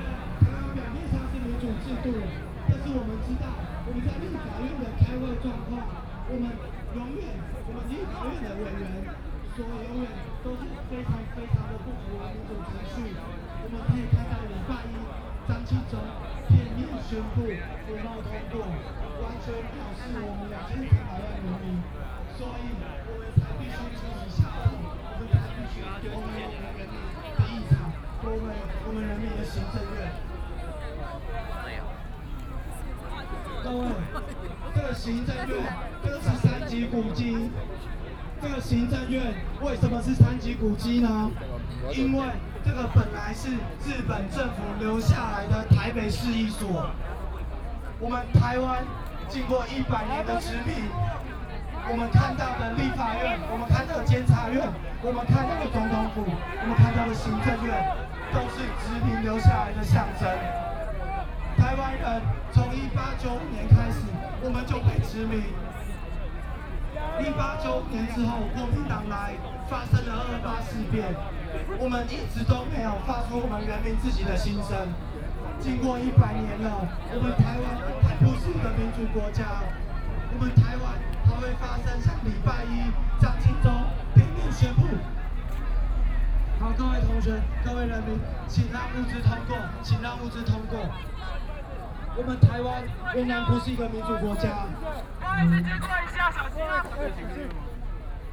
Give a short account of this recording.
University students occupied the Executive Yuan, Binaural recordings